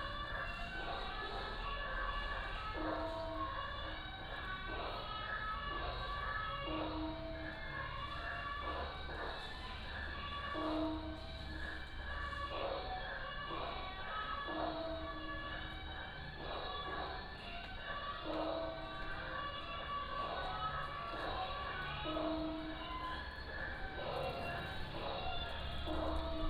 懋靈殿, Magong City - In the temple
In the temple, Small village, Traffic Sound
Penghu County, Magong City, 23 October, ~17:00